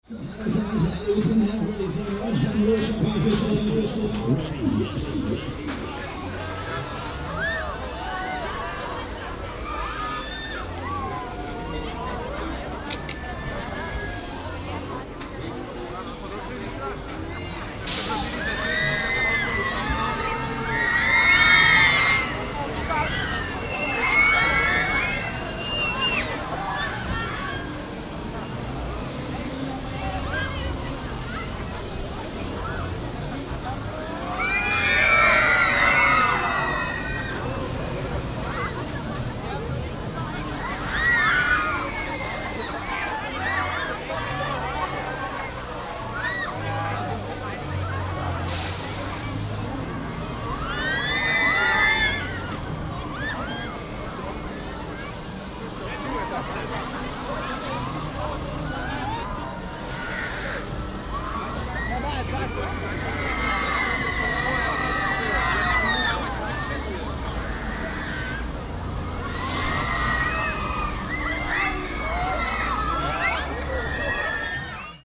Voltairestr, Weihnachtsmarkt - Revolution
07.12.2008 17:30 Weihnachtsmarkt, Rummelplatz, gefährliches Fahrgeschäft namens Revolution... / christmas market, dangerous fun ride called Revolution
Berlin, 7 December